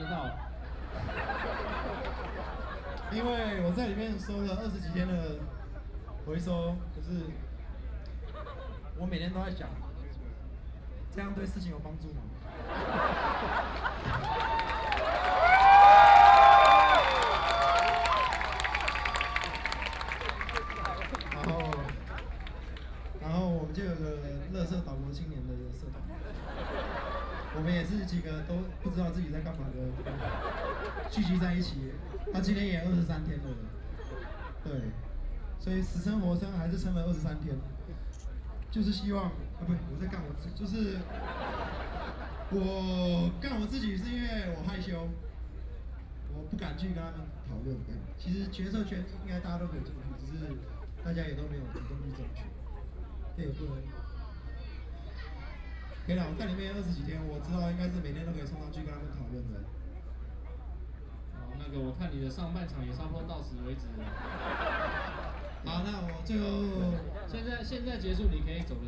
Taipei City, Taiwan - Profanity
People and students occupied the Legislature Yuan, The night before the end of the student movement, A lot of students and people gathered in front of the Plaza, Post to complain against the student movement during, Very special thing is to require the use of such profanity as the content